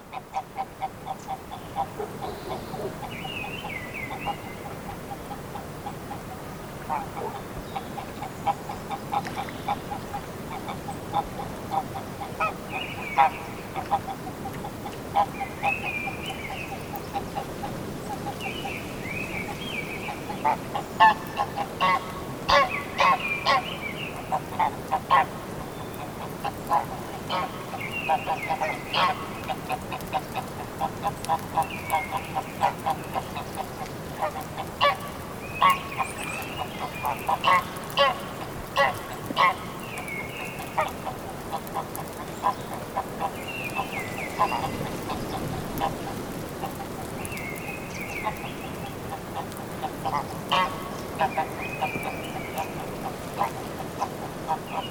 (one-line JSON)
{"title": "Lac de Mondely, La Bastide-de-Sérou, France - Mondely Lake", "date": "2018-03-20 12:20:00", "description": "Around the Monday Lake, birds, rain… zoom H6", "latitude": "43.05", "longitude": "1.44", "altitude": "389", "timezone": "Europe/Paris"}